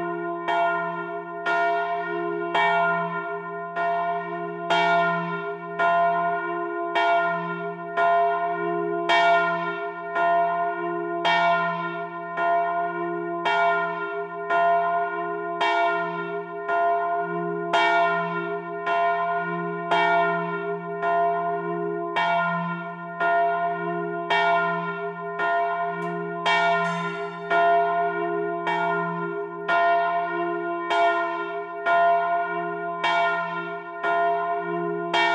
Rue du Ctr de l'Église, Lederzeele, France - Lederzeele - carillon automatisé et volée
Lederzeele - carillon automatisé et volée
12h
France métropolitaine, France, 30 June 2020